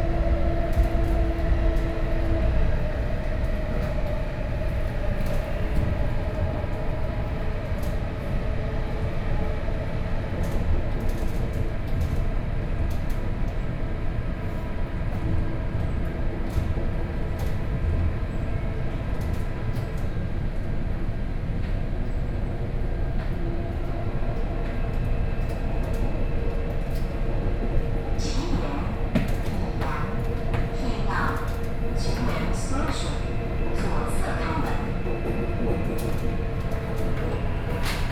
Tamsui-Xinyi Line, Taipei City - In the subway